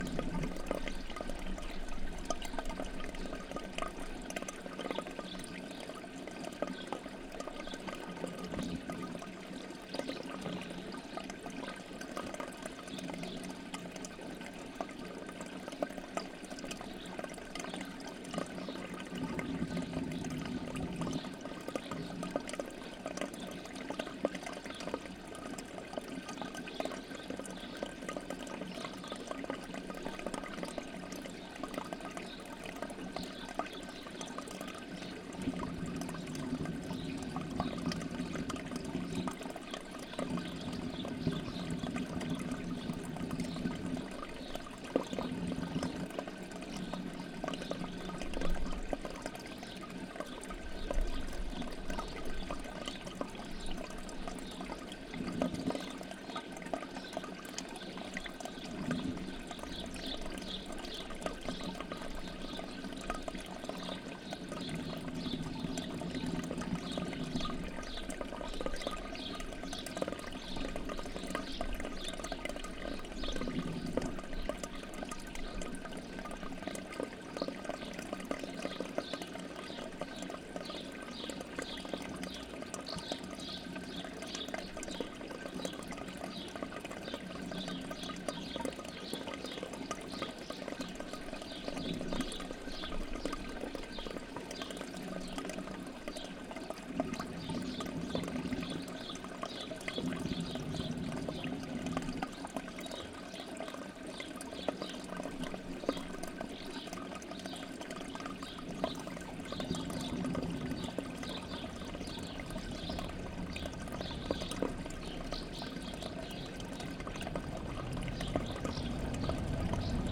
A water duct running from a public fountain.